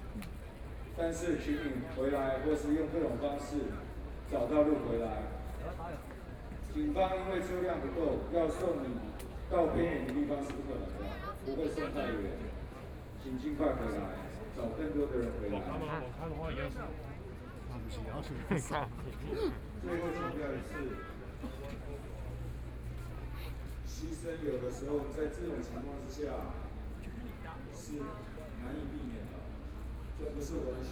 Executive Yuan, Taiwan - Occupied Executive Yuan
Protest, University students gathered to protest the government, Occupied Executive Yuan
Binaural recordings
Zhongzheng District, Taipei City, Taiwan, March 2014